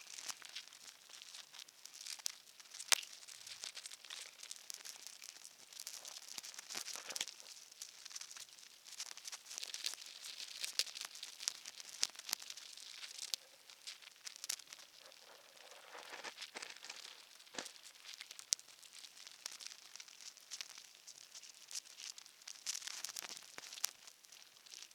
Lithuania, ants in sands
small sandy anthill and little workers in it (recorded with contact microphones)
5 August 2011, ~19:00